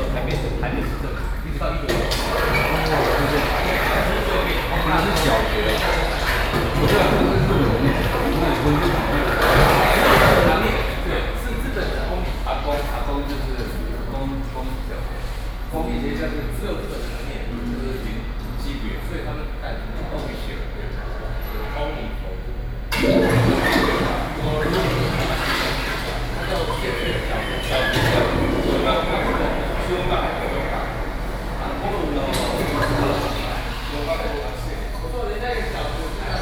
Museum of Contemporary Art, Taipei - Museum ticket hall